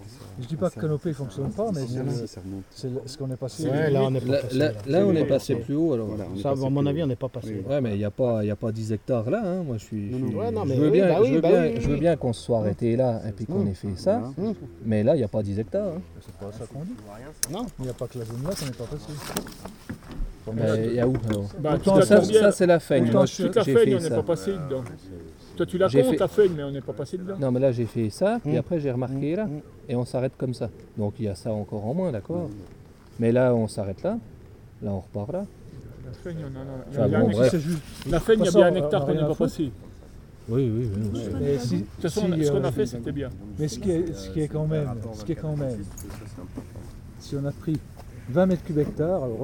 Debrief Martelage - Réserve Naturelle du Grand Ventron, Cornimont, France